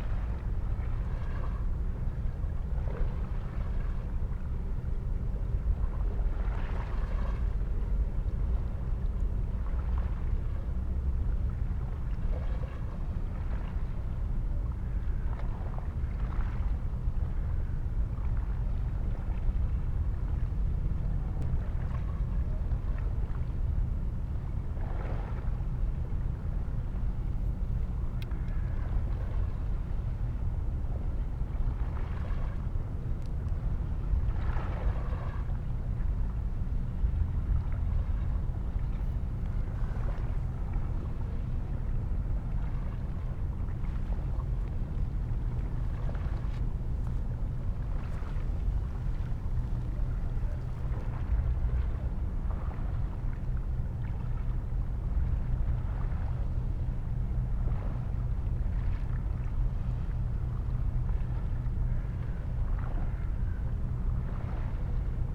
Molo, Punto Franco Nord, Trieste, Italy - at ground level
with ears (mics) close to the dock in massive white stones